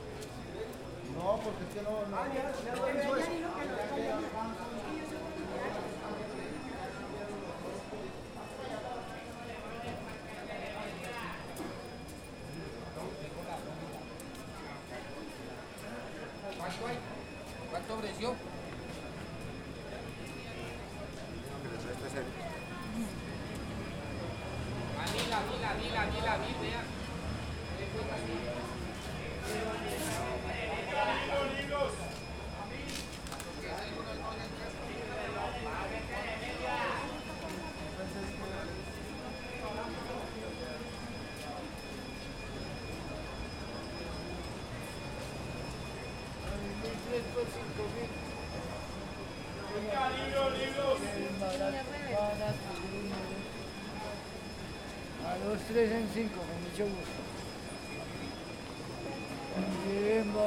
Cl., Bogotá, Colombia - Ambiente Sound-Walk
Centro de Bogotá, Desde carrera 7 con calle 16 hasta la zona de libreros y vendedores ambulantes, carrera 9 con calle 16 un miercoles a las 11:30 am, Grabadora Tascam DR-40.
27 June 2018, 11:30